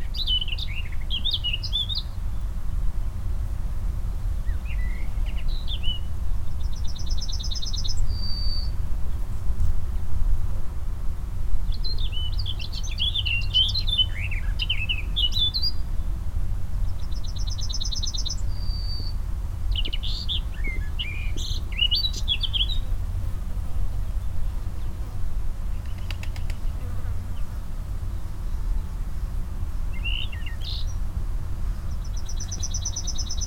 Eurasian Blackcap singing into a thicket, Dunnock and Yellowhammer singing into the fields.
Courcelles, Belgium, 3 June 2018